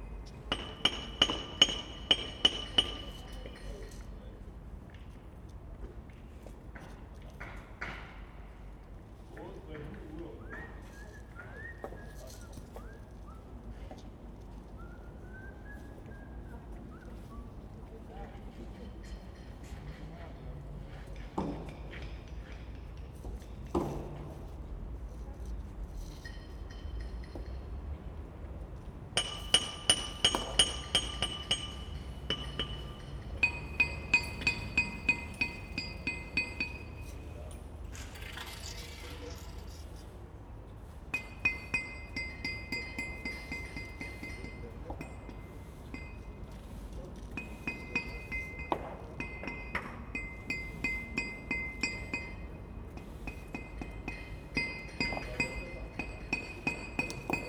Nördliche Innenstadt, Potsdam, Germany - Re-laying the stones in the Old Market
Soundscape in the late afternoon as stones are cut and knocked into place within the rather intricate patterns designed for paving the square. This whole central area of Potsdam is being restored back to it's former 18th century glory after the impact of the DDR. Some gains but certainly losses too as some impressively brutalist communist architecture is demolished.